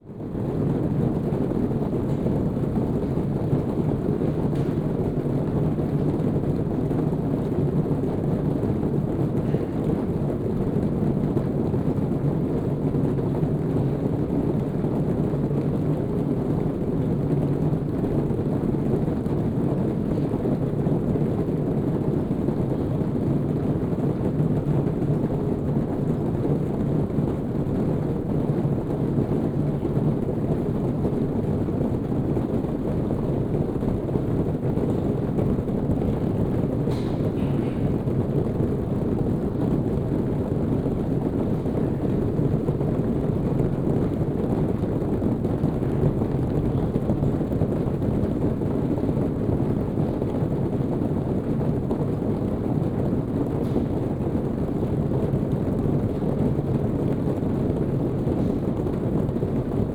Ovada, Woodins Way, Oxford - sound installation
sound installation by Zimoun, during audiograft festival, Oxford
(Sony PCM D50, OKM2)
Oxfordshire, UK, 12 March 2014